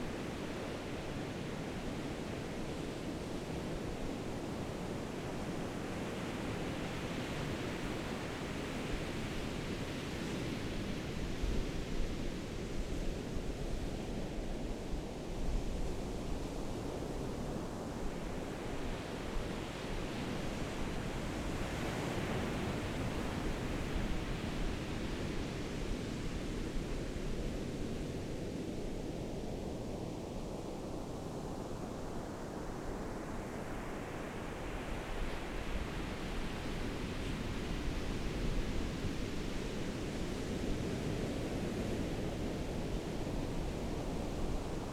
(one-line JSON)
{"title": "坂里沙灘, Beigan Township - sound of the waves", "date": "2014-10-13 13:09:00", "description": "Sound of the waves, In the beach, Windy\nZoom H6 +Rode NT$", "latitude": "26.22", "longitude": "119.98", "altitude": "1", "timezone": "Asia/Taipei"}